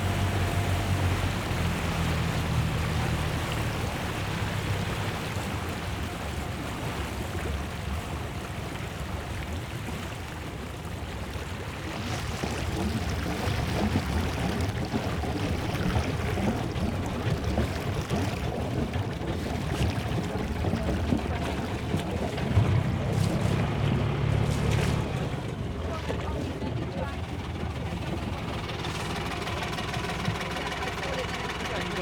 Studland, Dorset, UK - Poole Yacht Club Launch
Boarding the PYC Launch to ferry passengers to swinging moorings. Recorded on a Fostex FR-2LE Field Memory Recorder using a Audio Technica AT815ST and Rycote Softie.
1 April 2012, ~10:00